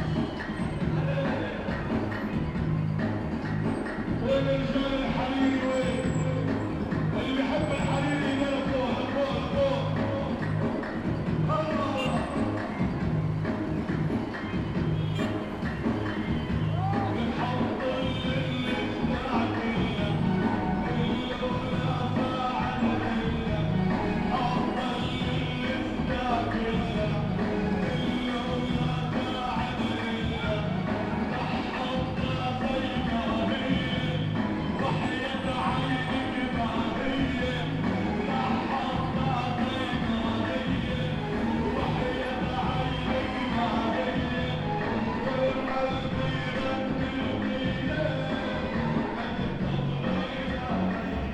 LEVIT Institute, Tripoli, Libanon - Rafik Hariri Day
Recorded with a PCM D-100 - celebrations of Rafik Hariri
Tripoli, Lebanon, 14 February 2018